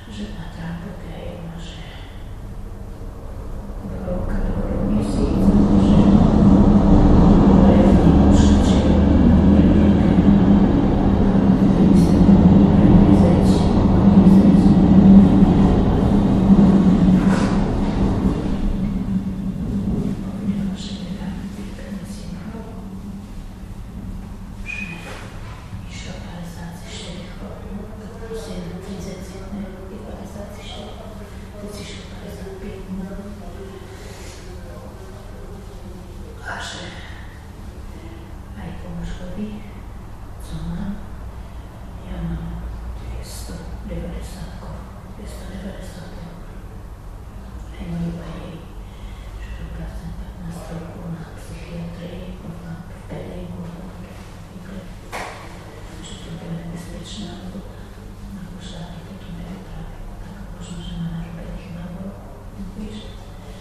Lamač, Slovenská republika - Ladies chatting in the waiting room of the train station Bratislava-Lamač
Actually they seemed as if using the waiting room as a chat room during wintertime. One of them was treating a thin plastic bag with her hands.
Slovakia, 29 November 2012